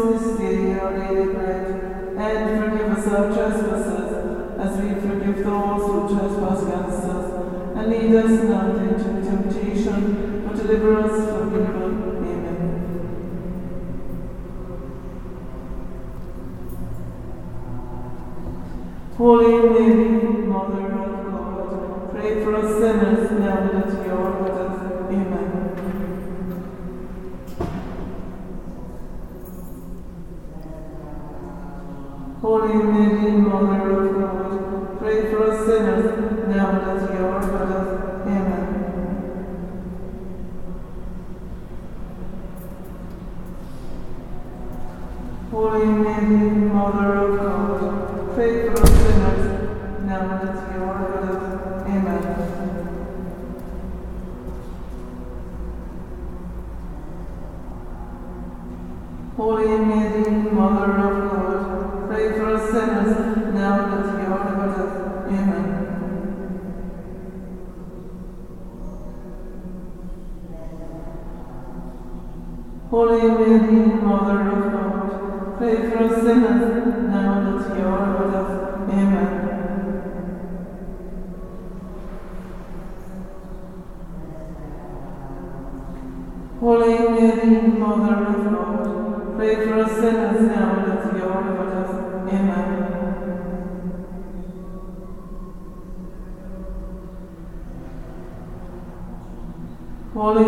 St.Augustines Church, Washington St, Centre, Cork, Ireland - Thursday Afternoon Rosary
Rosary recital in St. Augustine's Church, Cork. Tascam DR-05.